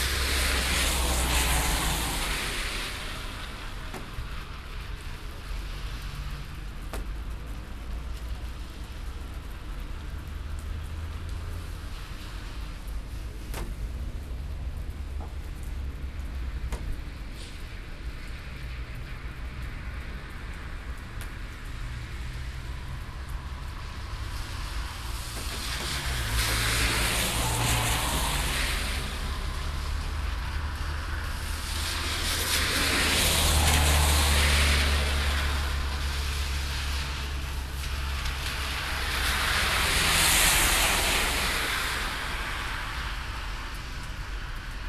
Rainy Sepa street, Tartu, Estonia